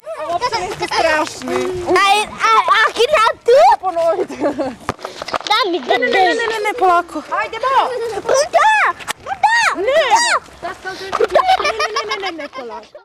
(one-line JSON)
{"title": "Pehlin-Rijeka, Croatia - Jurjevo-Gypsy festival-Children", "date": "2012-05-06 19:01:00", "latitude": "45.35", "longitude": "14.41", "altitude": "212", "timezone": "Europe/Zagreb"}